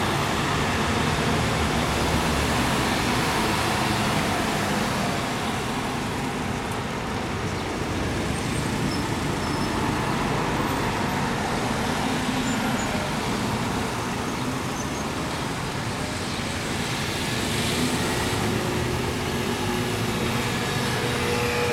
Porta Maggione, Fano (PU), Traffic